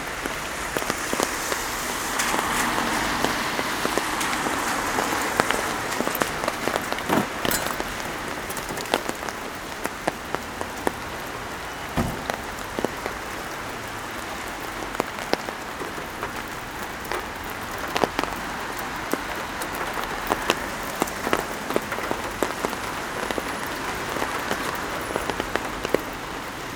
Milano, Italy - Raindrops in the street
raindrops falling from the trees on the umbrella and on parked cars, traffic in the rain
10 November